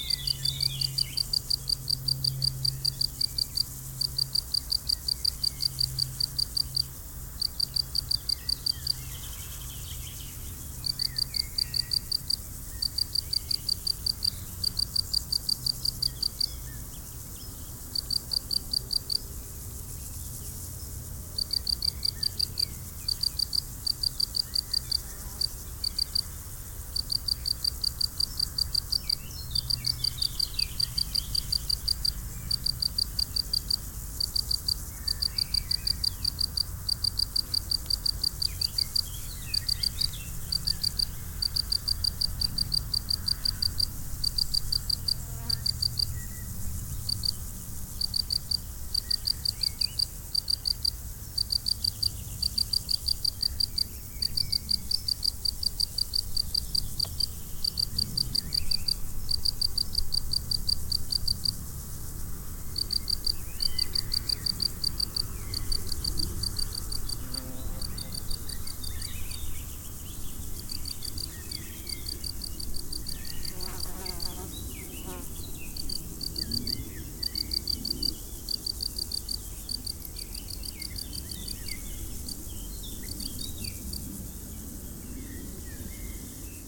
Prairie sèche, stridulations des grillons, sauterelles, criquets, bruits de la circulaton sur la RD991. Quelques oiseaux.